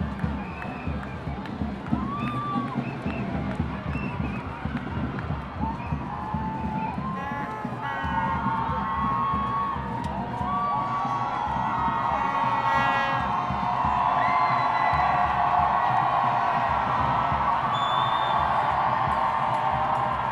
Kunstberg, Brussel, België - Climate protests on the Mont des Arts
On est plus chaud que le climat!
Bruxelles, Belgium